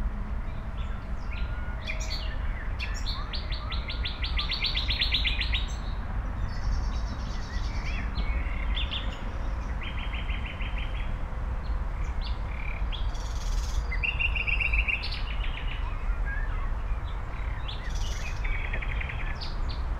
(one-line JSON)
{"title": "Mauerweg, Kiefholzstr., Berlin, Deutschland - birds and ambience", "date": "2015-05-11 19:40:00", "description": "Mauerweg, former Berlin Wall area, now it's a nice park alongside ponds and a little canal. songs of nightingales, however not sure if it's not other birds imitating their songs.\n(Sony PCM D50, Primo EM172)", "latitude": "52.48", "longitude": "13.47", "altitude": "30", "timezone": "Europe/Berlin"}